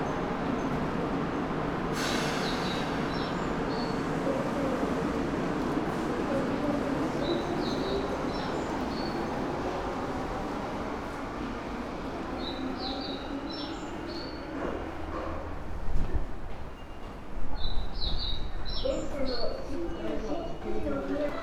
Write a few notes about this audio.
at the hanzomon subway station with only recorded voices speaking